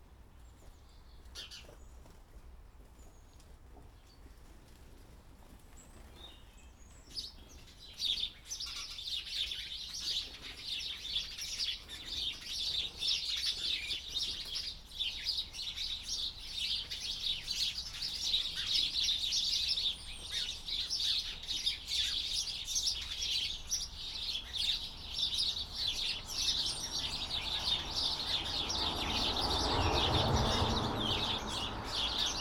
House sparrows, Gore Lane Cottages, Barwick, Herts, UK - House Sparrows
A recording of the flock of house sparrows that congregate in a large honeysuckle that sprawls over a brick shed at the rear of the cottages. A tawny owls calls, the geese occasionally honk at the top of the garden and the blue and great tits squabble on the feeders
East of England, England, United Kingdom, 27 December 2019